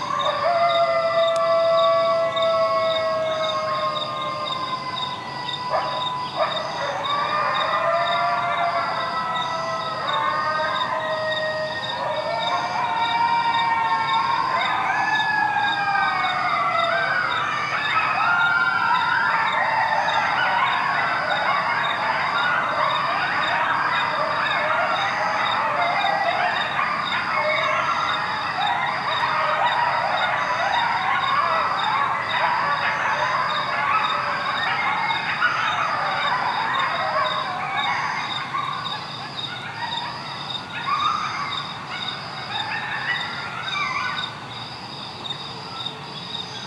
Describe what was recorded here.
Recorded with a pair of DPA 4060s and a Marantz PMD661